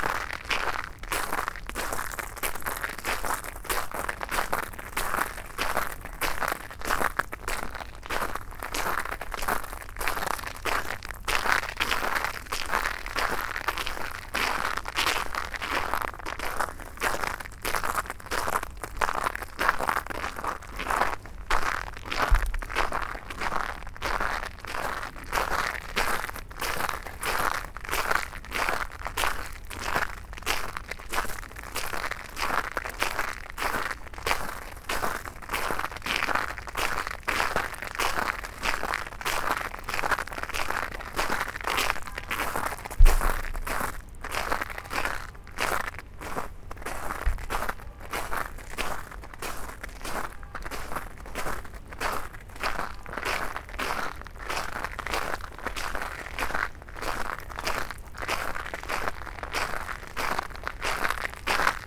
Mont-Saint-Guibert, Belgique - Black ice
Walking in the black ice, inside the Hayeffes school. It's particularly frozen and dangerous in this village where slopes are everywhere !
Mont-Saint-Guibert, Belgium, 7 January 2017, 20:57